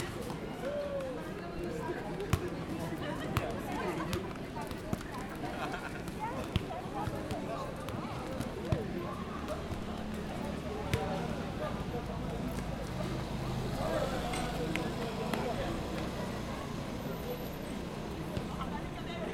Montmartre, Paris, France - Place des Abbesses
Place des Abbesses, Paris.
Sounds from the street: groups of tourists passing by and a group of young adults and kids playing football. Bell sounds from the Église Saint-Jean-de-Montmartre.
22 August 2014, 17:30